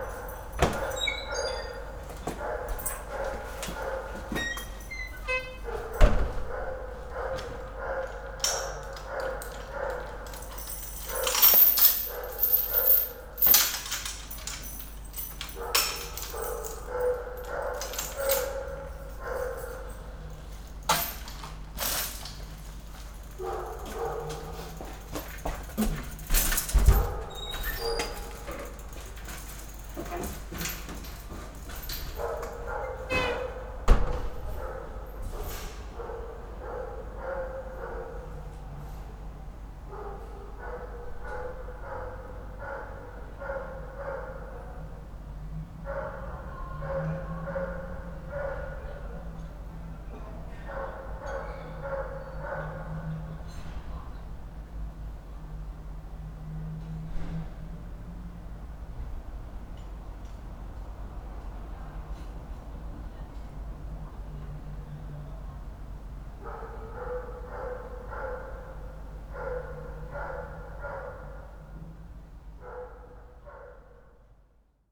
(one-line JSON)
{"title": "Berlin Bürknerstr., backyard window - a dog, someone locks a bike", "date": "2014-06-27 22:30:00", "description": "backyard continued: a dog barks (never heard before), someone locks a bike, night ambience\n(Sony PCM D50)", "latitude": "52.49", "longitude": "13.42", "altitude": "45", "timezone": "Europe/Berlin"}